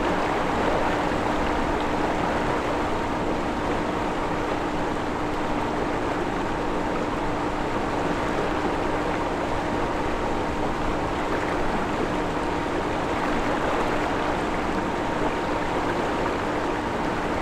Rue de Pourrenque, Fenouillet, France - generating electrical power
system for generating electrical power using flowing water in canals, rivers and the like water streams.
Captation : ZOOMH6
Occitanie, France métropolitaine, France, 11 September